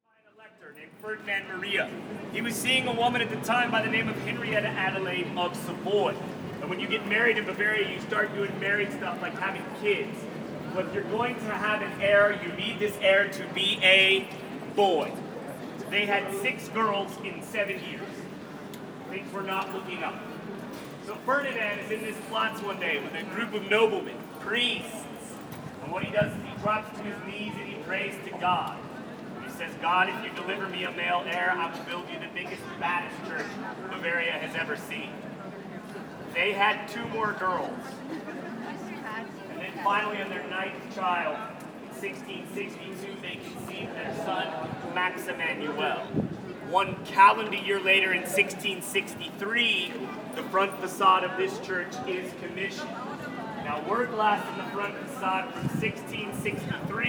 munich - city tour, theatiner church